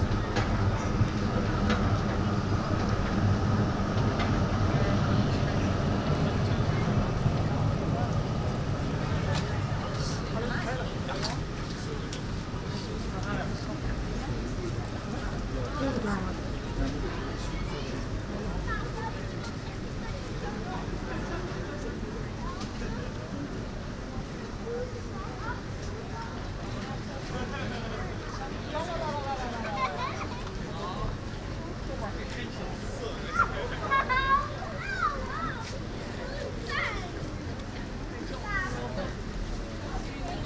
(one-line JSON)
{"title": "Buitenhof, Den Haag, Nederland - Buitenhof on Easter day.", "date": "2015-04-05 15:10:00", "latitude": "52.08", "longitude": "4.31", "timezone": "Europe/Amsterdam"}